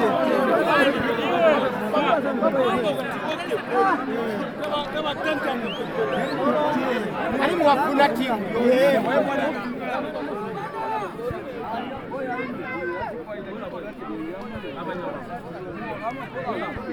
... the match in full swing...
Southern Province, Zambia, 14 July 2018